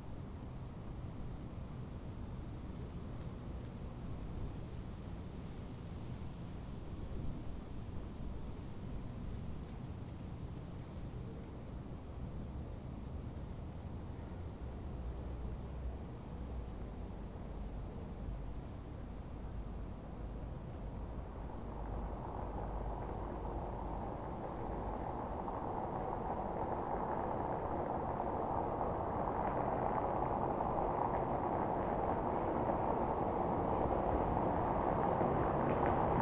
Berlin: Vermessungspunkt Maybachufer / Bürknerstraße - Klangvermessung Kreuzkölln ::: 08.09.2010 ::: 02:04